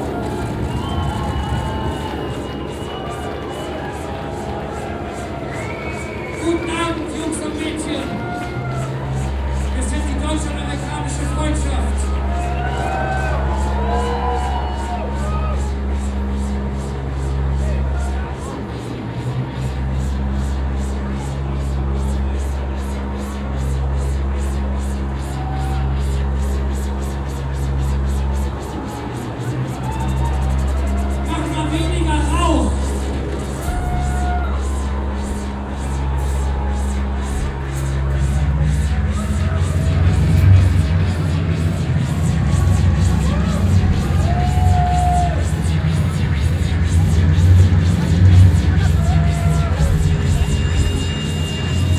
erstaunlich diletantischet konzertbeginn der deutsch amerikanischen freundschaft (daf) auf der c/o pop 2008
soundmap nrw:
social ambiences, topographic field recordings